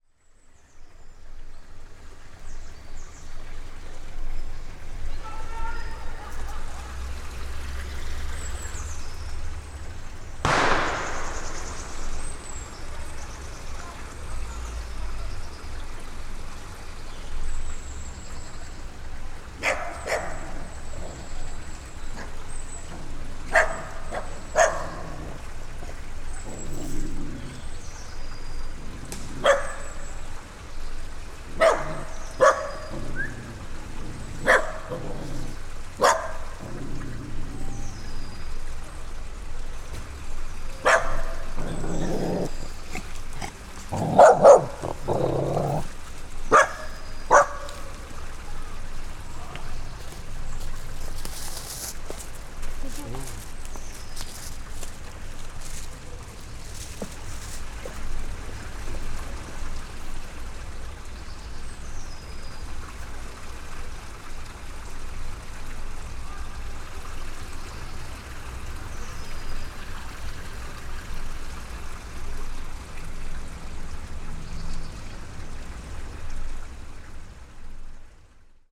Brussels, Kinsendael natural reserve, fireccracker and dogs
Kids playing nearby with firecrackers and a woman with two small dogs. No rest for wild species..
SD-702, Me-64, NOS.